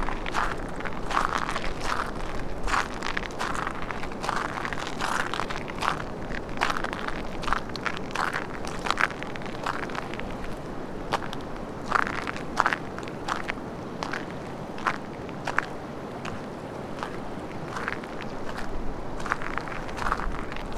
Latvia, Kolka, a walk on a beach
14 August 2012